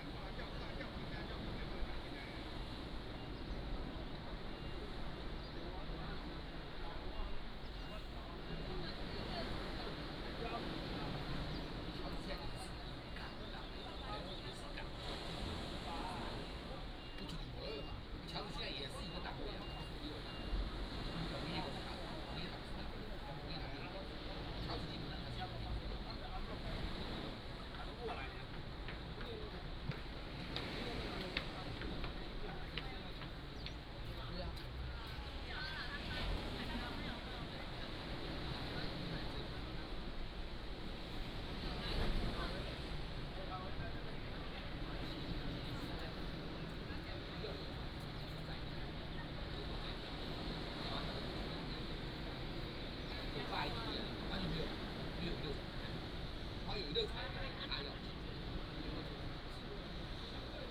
橋仔村, Beigan Township - Small fishing village
Small port, Sound of the waves, tourists
馬祖列島 (Lienchiang), 福建省 (Fujian), Mainland - Taiwan Border, 13 October 2014